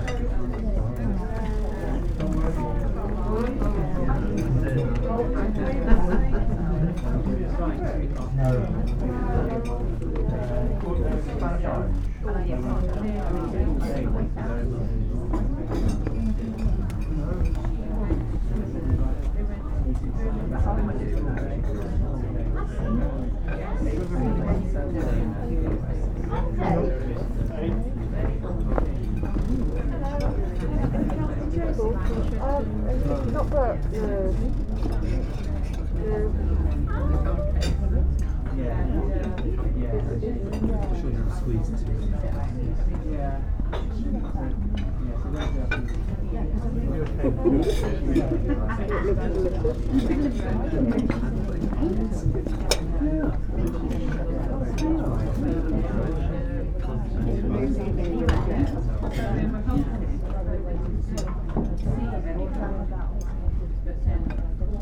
Busy Cafe, Ledbury, Herefordshire, UK - Busy Cafe
Lunch time in a busy cafe. It is warm and we are eating outside in the courtyard.
MixPre 6 II with 2 x Sennheiser MKH 8020s. My home made windjammer is mistaken for a dog under the table, the third time this has happened.
15 October 2021, 12:19